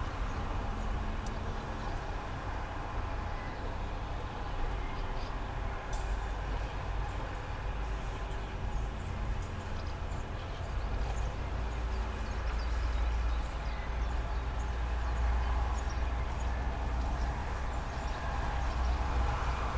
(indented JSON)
{
  "title": "Kelmė, Lithuania, through the window",
  "date": "2019-06-12 10:10:00",
  "description": "listening to the city through open window of residency house",
  "latitude": "55.63",
  "longitude": "22.94",
  "altitude": "131",
  "timezone": "Europe/Vilnius"
}